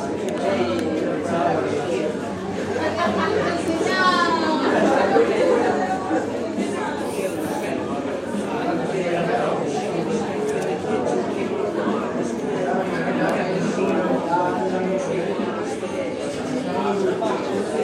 Cra 88 con Cll, Medellín, Antioquia, Colombia - Ambiente de cubículos del bloque 10 Universidad de Medellin.
Sonido ambiente de cubículos del bloque 10 de la Universidad de Medellín en la Facultad de Comunicación, se escuchan voces, risas y gritos.
Coordenadas: 6°13'56.8"N+75°36'44.8"W
Sonido tónico: voces hablando.
Señales sonoras: risas, gritos e insultos.
Grabado a la altura de 1.20 metros
Tiempo de audio: 3 minutos con 39 segundos.
Grabado por Stiven López, Isabel Mendoza, Juan José González y Manuela Gallego con micrófono de celular estéreo.
Región Andina, Colombia, 27 September 2021, ~12:00